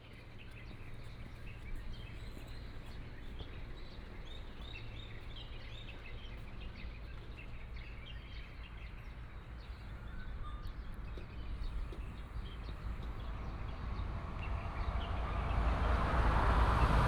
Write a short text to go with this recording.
in the morning, birds sound, traffic sound, Chicken cry